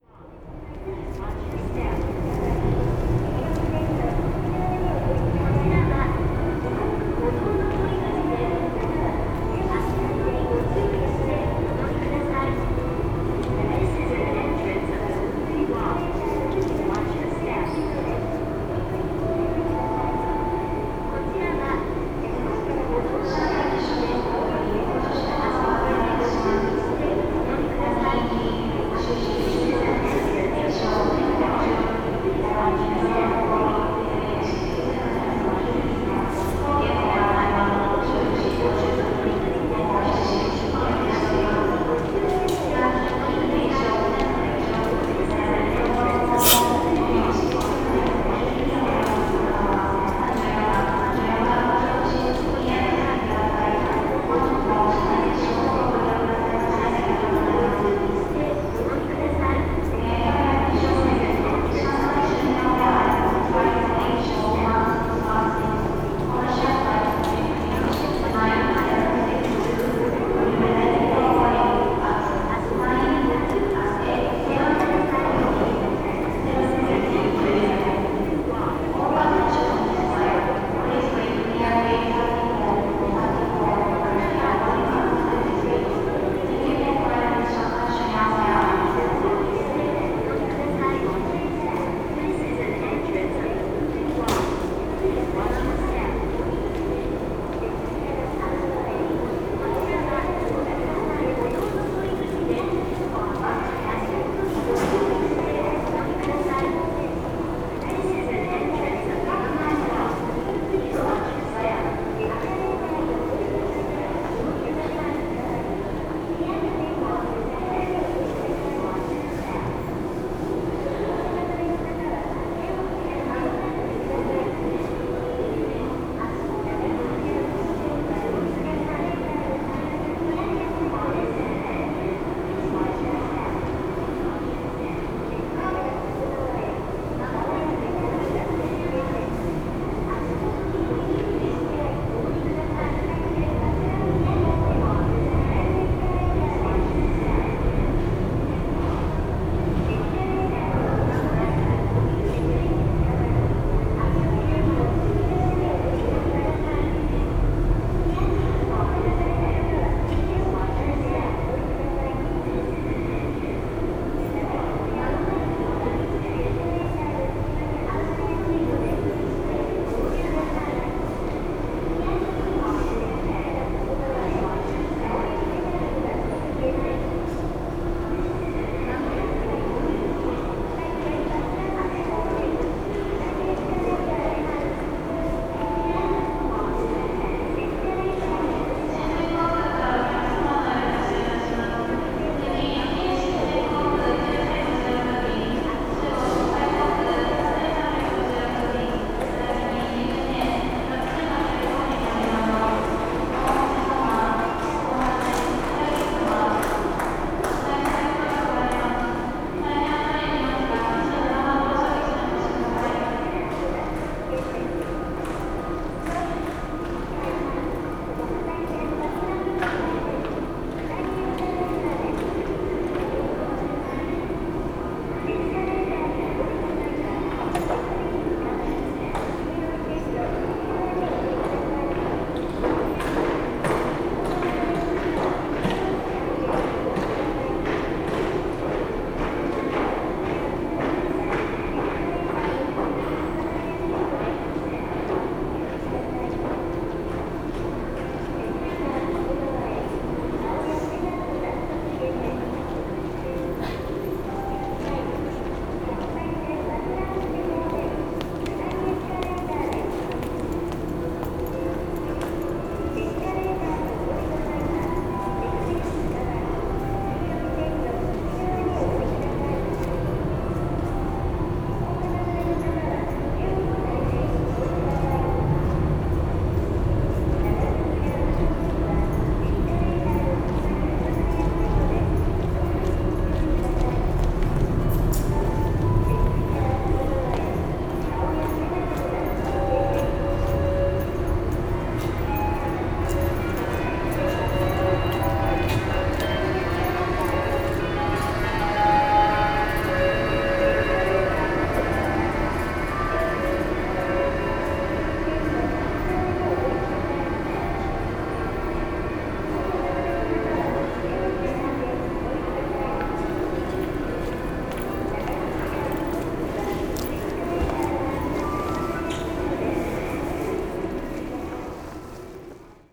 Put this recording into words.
terminal ambience in the evening. last domestic planes departing and arriving. some passengers passing by, announcements and sounds from vending machines, movable walkway and aid car. (roland r-07)